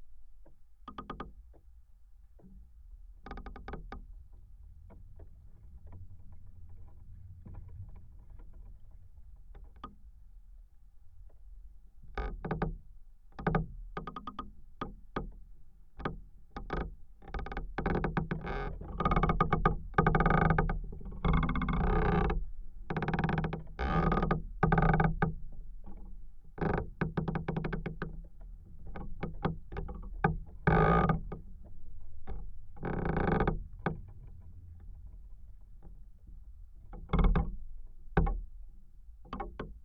Sudeikių sen., Lithuania, singing tree
contact microphones placed on a branch of "singing" tree in the wind